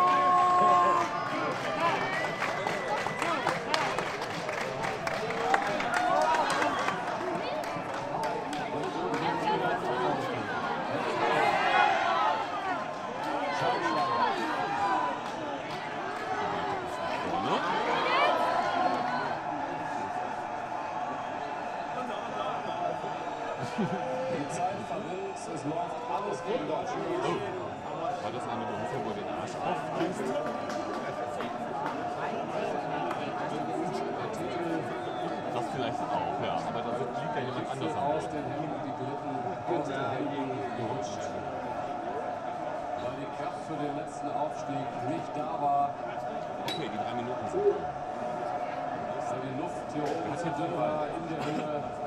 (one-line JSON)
{"title": "berlin, ohlauer straße: fanmeile - the city, the country & me: germany - spain 0:1 - spain wins european championship after 44-year wait", "description": "the city, the country & me: june 29, 2008", "latitude": "52.50", "longitude": "13.43", "altitude": "38", "timezone": "GMT+1"}